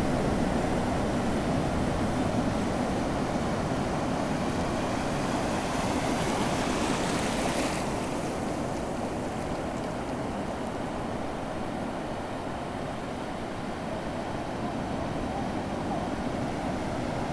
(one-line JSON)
{"title": "Av. Copacabana - Lagoinha, Ubatuba - SP, 11680-000, Brasil - Ondas da Praia da Lagoinha Ubatuba", "date": "2018-08-26 12:25:00", "description": "Gravação das ondas da praia da Lagoinha em Ubatuba, São Paulo. Dia nublado com maré alta.\nRecord the waves of Lagoinha beach in Ubatuba, São Paulo. Cloudy with high tide.", "latitude": "-23.52", "longitude": "-45.20", "altitude": "2", "timezone": "GMT+1"}